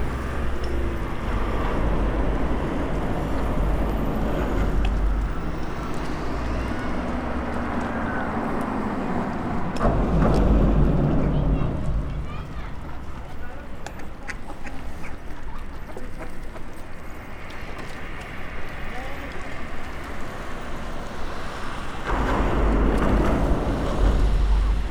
The water was partly frozen and several kinds of birds including ducks, swans and coots where swimming and walking around. Also you can hear the cars driving over the bridge.
Recorded using a Senheiser ME66, Edirol R-44 and Rycote suspension & windshield kit.